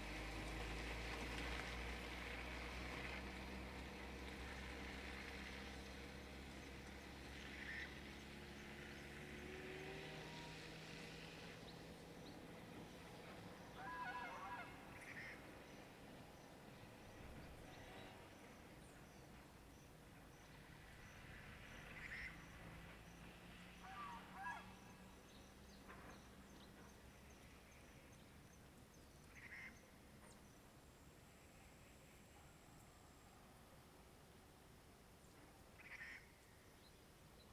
In the valley area, Bird call, Dog barking, traffic sound, On the dry river
Zoom H2n MS+XY